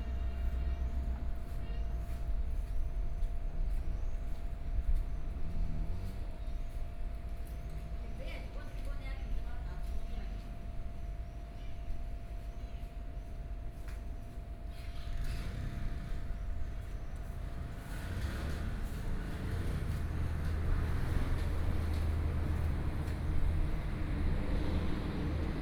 Yongguang Rd., Su'ao Township - In the small park
In the small park, Traffic Sound, Hot weather